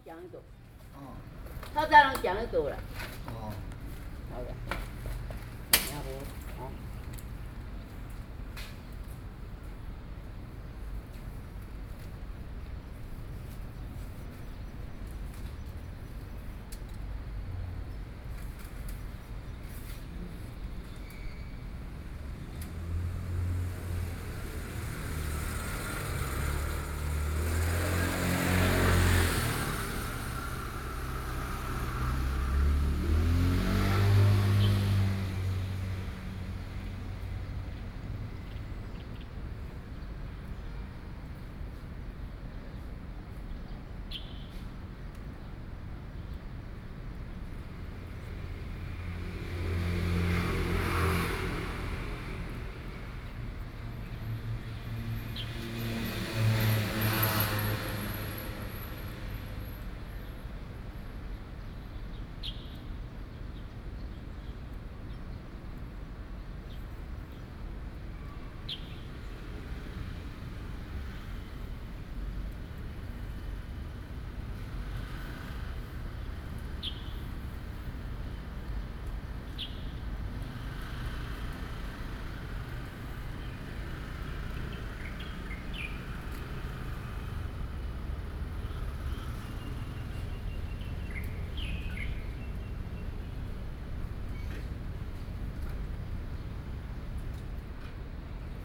Xiuchuan St., Sanxia Dist. - In a square
In a square in front of the old house, Old people, Bird calls, Traffic Sound
Binaural recordings
Sony PCM D50 + Soundman OKM II
Sanxia District, New Taipei City, Taiwan, July 2012